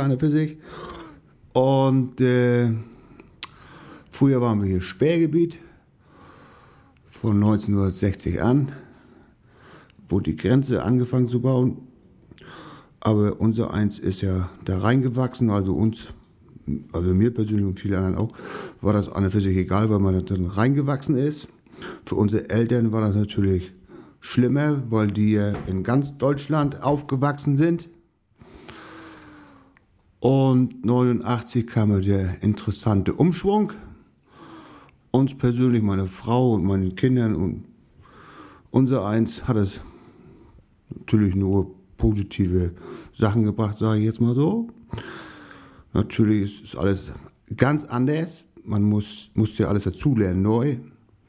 dassow - herr freitag
Produktion: Deutschlandradio Kultur/Norddeutscher Rundfunk 2009